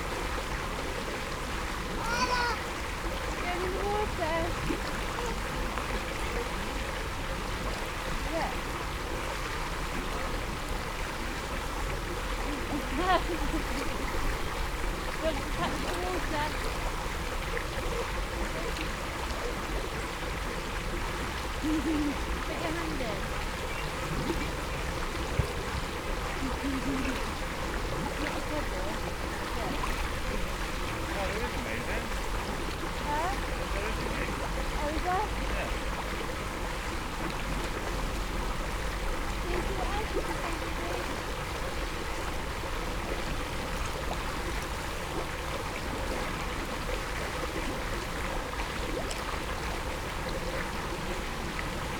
Bondgate Without, Alnwick, UK - overflow and uprising ...
overflow and uprising ... alnwick gardens ... open lavaliers clipped to sandwich box ... placed above one of four outflows of a large man made pond ... also water welling up from the middle of the pool ... only one chance to record so includes the visits of numerous folk ...